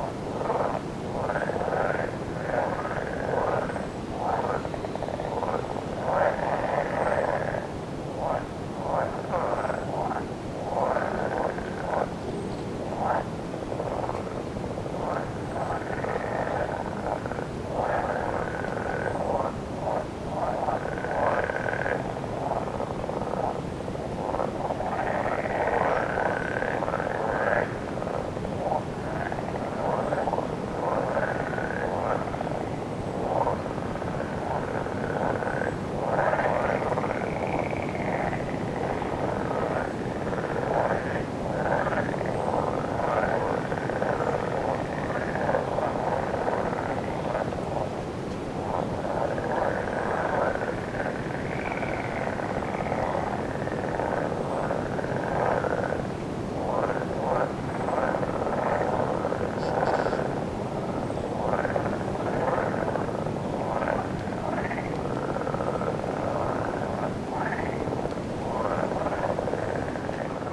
Zenkino (Зенькино) Village, Moscow district, Russian Federation. - Toads in a swamp #9
Near the village there is a swamp that hidden in the tall grass. Toads call on a background of a distant busy road.
Recorded with the XY misc of Zoom H5 recorder by hand.